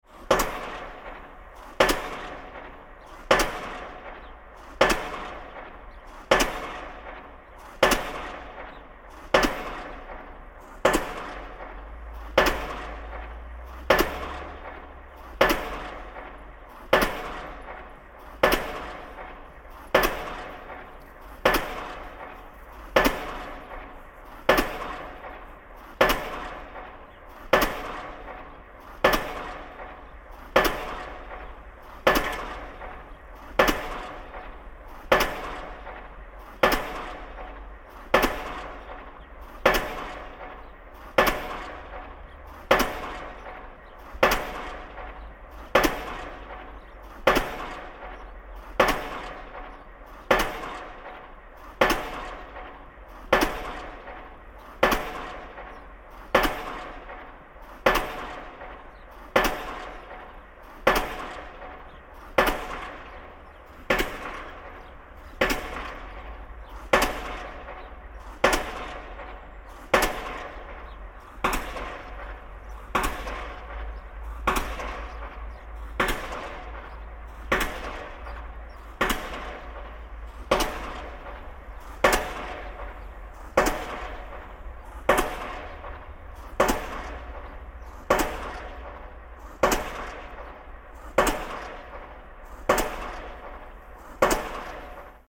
Russia, Severodvinsk - construction of buildings, the installation of piles
construction of buildings, the installation of piles
стройка, забивка сваи
recorded on zoom h4n + roland cs-10em (binaural recording)
4 January 2013